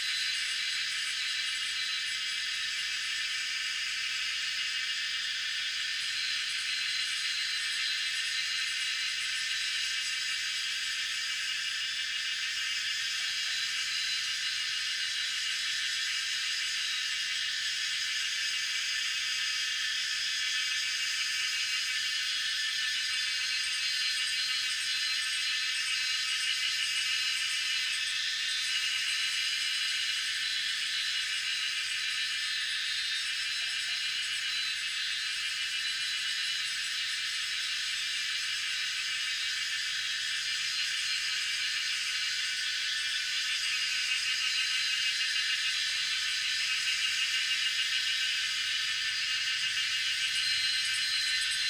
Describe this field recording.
Cicada sounds, in the woods, Zoom H2n MS+XY